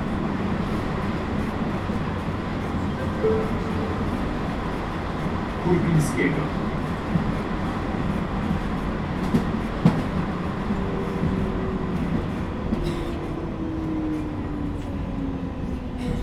Poznan, Piatkowo, train ride from Plaza to Szymanowskiego